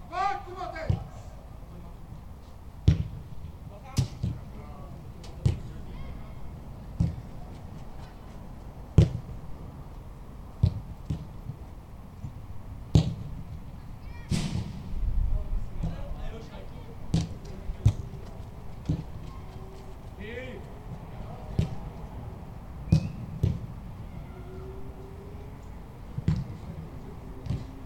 Lokomotiva Holešovice Football field. Praha, Česká republika - Training with coach
Training of local football match.